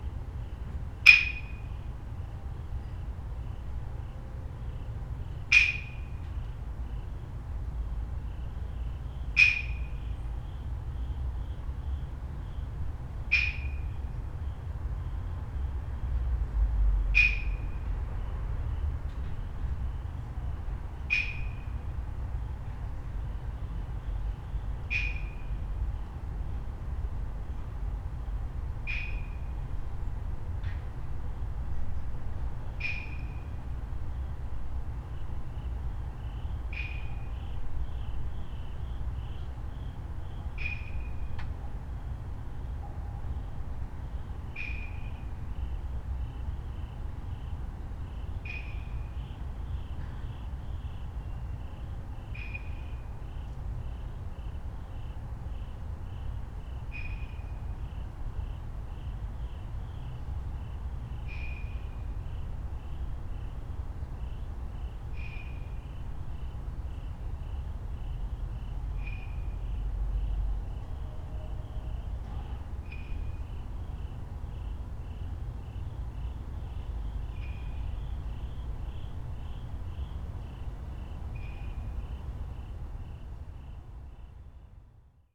room window, Gojo Guest House Annex, Kyoto - at 20:00, every night
every night, percussionist sounding the streets around, he says it is for good luck